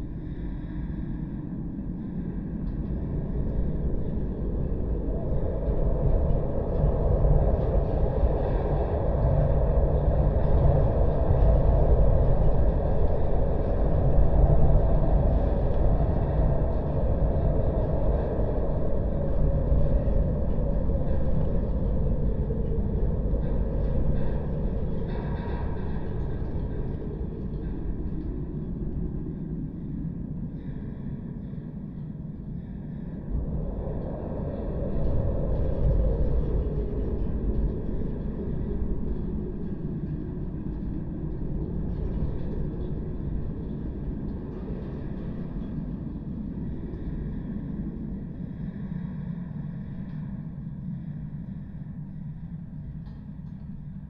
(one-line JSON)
{"title": "Spittal of Glenshee, Blairgowrie, UK - discarded", "date": "2022-06-11 11:39:00", "description": "discarded fence wire by the Allt Ghlinn Thaitneich", "latitude": "56.82", "longitude": "-3.47", "altitude": "354", "timezone": "Europe/London"}